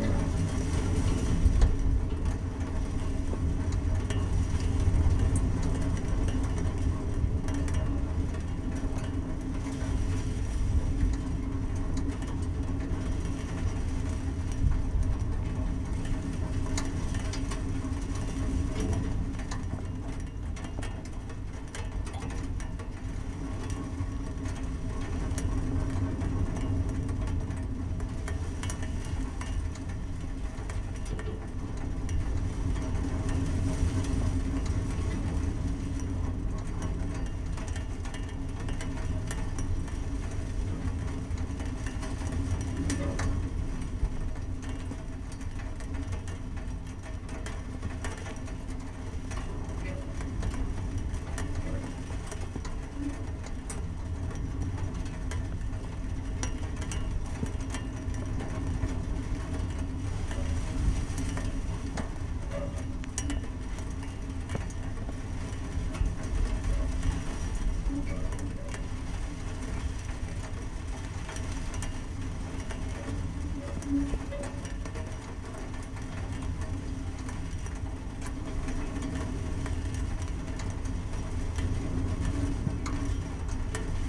Lipa, Kostanjevica na Krasu, Slovenia - Transmitter on mount Trstelj (643m)
Tv, radio and gsm transmitter on mount Trstelj (643m) in heavy wind and some rain.
Recorded with MixPre II and contact microphone AKG C411, 50Hz HPF.
Slovenija, 28 December 2020